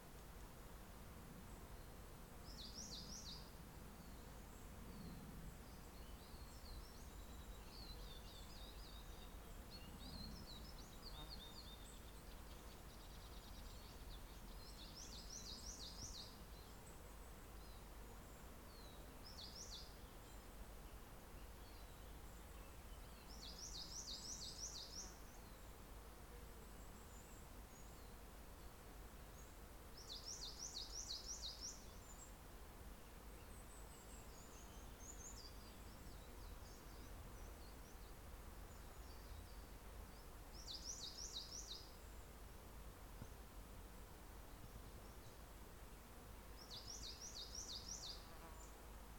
{"title": "Exeter, UK - Webberton Wood Forestry England", "date": "2022-07-12 10:15:00", "description": "This recording was taken using a Zoom H4N Pro. It was recorded at Webberton Wood part of the Forestry England Haldon Forest. As this recording was taken on the forest track, a Goshawk was seen flying up from the trees. The path's drainage ditches were lined with water mint and the insects can be heard humming.This recording is part of a series of recordings that will be taken across the landscape, Devon Wildland, to highlight the soundscape that wildlife experience and highlight any potential soundscape barriers that may effect connectivity for wildlife.", "latitude": "50.67", "longitude": "-3.60", "altitude": "166", "timezone": "Europe/London"}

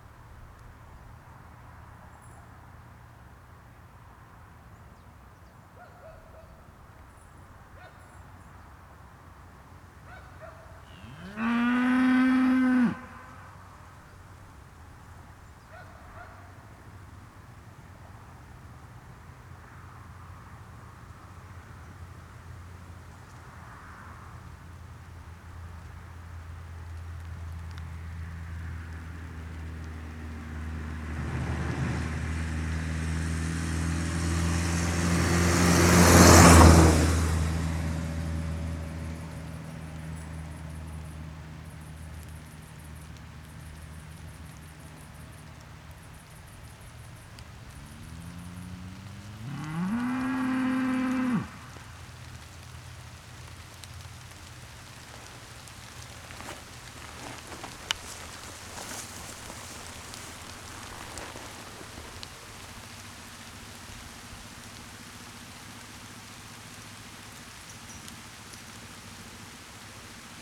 {"title": "Piertanie, Suwałki, Polen - Piertanie, Suwałki - cows mooing, dog barking, rain setting in", "date": "2010-09-05 18:02:00", "description": "Piertanie, Suwałki - cows mooing, dog barking, rain setting in. One car passing by. [I used Olympus LS-11 for recording]", "latitude": "54.09", "longitude": "23.10", "altitude": "137", "timezone": "Europe/Warsaw"}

